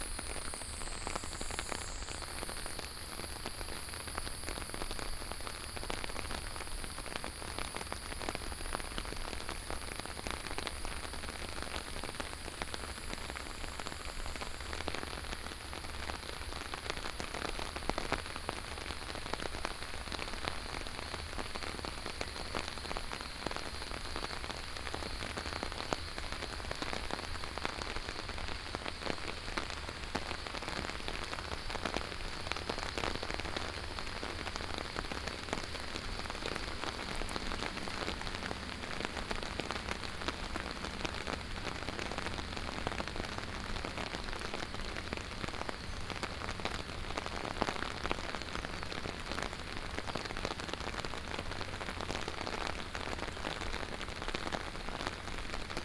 Zhonggua Rd., 桃米里 Puli Township - Walking in the rain
Walking in the rain, The sound of water streams, Traffic Sound, Cicadas cry